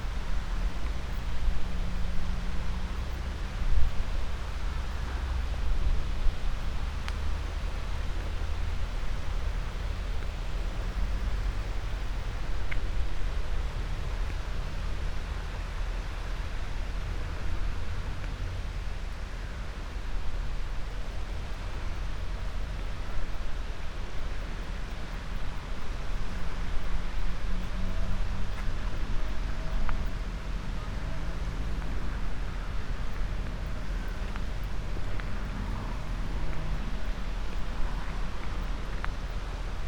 boundary stone, Italy, Slovenija - flows and borders
walk around boundary stone at the location between Italy and Slovenija, winds through poplar grove and sea waves softly flow together ... borders ”that are not” ...